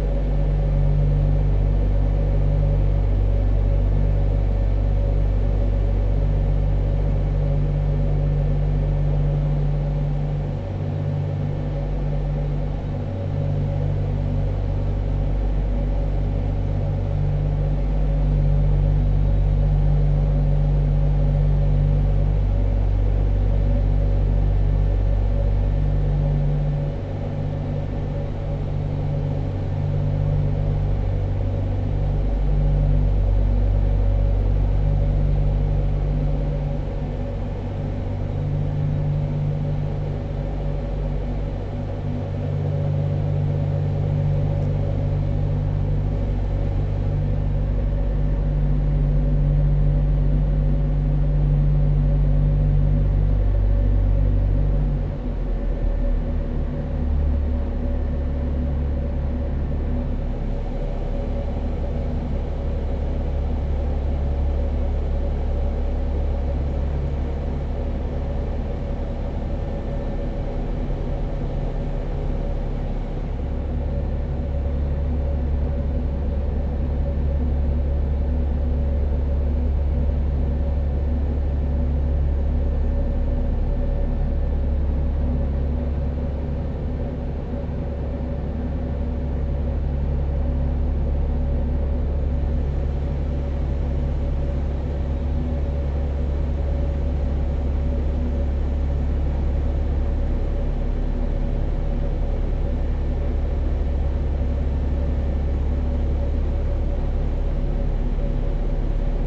Świnoujście, Polska - Ferry Tales
Zoom F6, Superlux S502, Rode NTG4. Karsibor 4 Ferry on the go. Karsibór ferry is one of my very first and intimate noise experiences. Since next summer it will be shut down. So I decided to record it as extensibely as much as posiible and prepare VR sound experience. This is one of first day (night) recordings. Hot and calm august nigth, no people, empty ferry.
Just before the Ferry stops running (someday in 06.2023) I would like to arrange a festival of listening to it. Stay tuned.